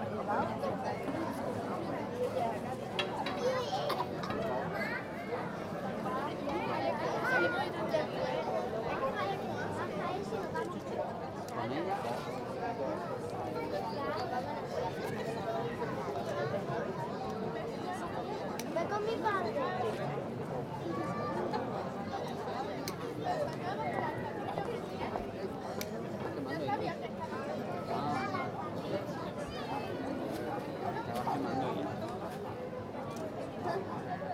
{
  "title": "Frigiliana, Málaga, Spanien, Iglesia San Antonio - Easter procession in Andalucia near church",
  "date": "2014-04-19 18:41:00",
  "description": "TASCAM DR-100mkII with integrated Mics",
  "latitude": "36.79",
  "longitude": "-3.90",
  "altitude": "310",
  "timezone": "Europe/Madrid"
}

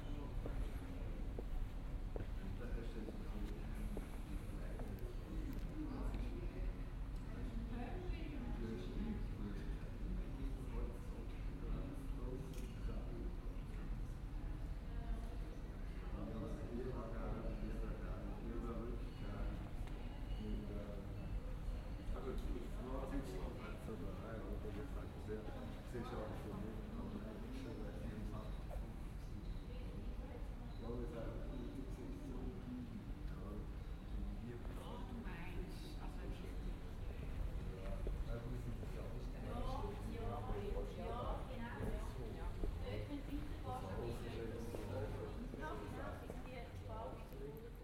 {
  "title": "Aarau, Rathausgasse, Night, Schweiz - Nachtbus",
  "date": "2016-06-28 23:23:00",
  "description": "While during the evening walks the busses were absent, now one crosses the recording",
  "latitude": "47.39",
  "longitude": "8.04",
  "altitude": "385",
  "timezone": "Europe/Zurich"
}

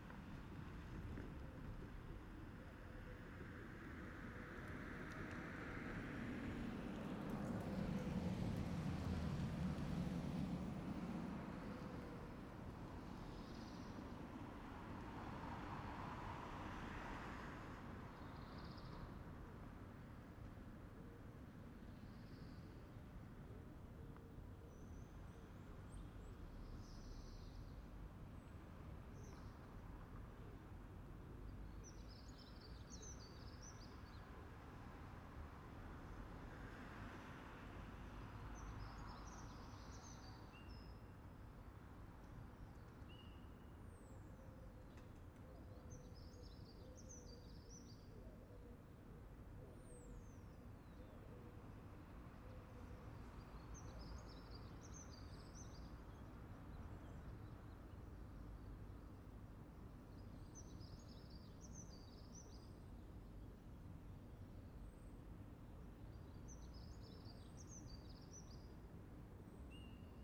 {
  "title": "Avenue des Cordeliers, La Rochelle, France - P@ysage Sonore - Landscape - La Rochelle COVID Parking Cité administrative La Rochelle bell tower 8h",
  "date": "2020-04-27 07:57:00",
  "description": "small traffic on Monday morning\n8 am bell at 2'17 with tractor mower.....\n4 x DPA 4022 dans 2 x CINELA COSI & rycote ORTF . Mix 2000 AETA . edirol R4pro",
  "latitude": "46.16",
  "longitude": "-1.14",
  "altitude": "12",
  "timezone": "Europe/Paris"
}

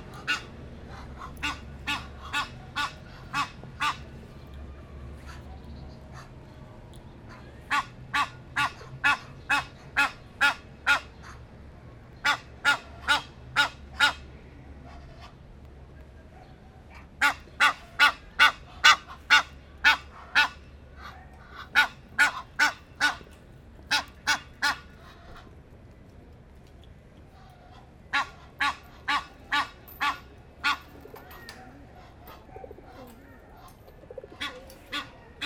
{
  "title": "Amsterdam, Nederlands - Egyptian geese",
  "date": "2019-03-28 13:10:00",
  "description": "Egyptian goose (Nijlgans in nederlands). Along a quiet canal, two geese are afraid because I'm near.",
  "latitude": "52.37",
  "longitude": "4.90",
  "altitude": "3",
  "timezone": "Europe/Amsterdam"
}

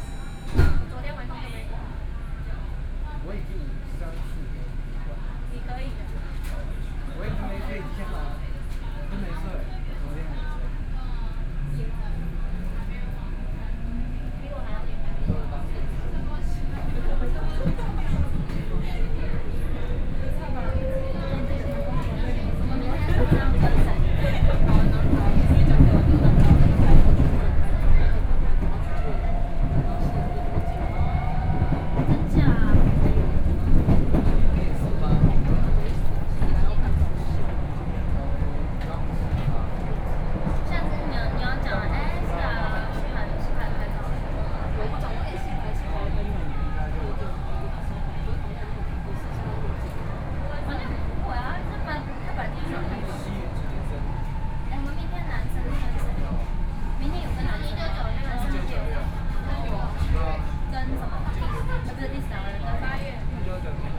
{
  "title": "Tamsui, Taiwan - Tamsui Line (Taipei Metro)",
  "date": "2013-11-02 21:26:00",
  "description": "from Tamsui Station to Zhuwei Station, Binaural recordings, Sony PCM D50 + Soundman OKM II",
  "latitude": "25.16",
  "longitude": "121.45",
  "altitude": "12",
  "timezone": "Asia/Taipei"
}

